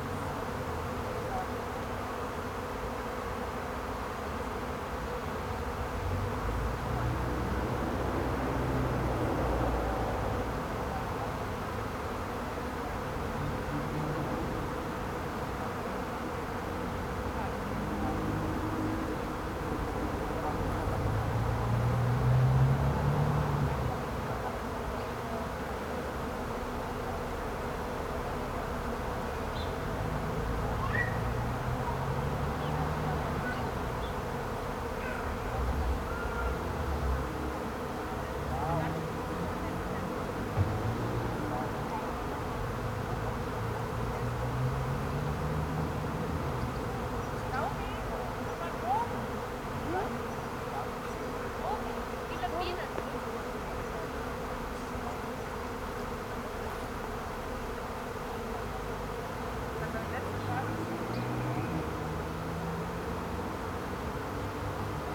Prinzessinnengarten Moritzplatz, Prinzenstraße, Berlin - colony of bees
Prinzessinnengarten Berlin, suddenly a colony of bees appeared in the garden, gathering just above me in a tree.
(Sony PCM D50)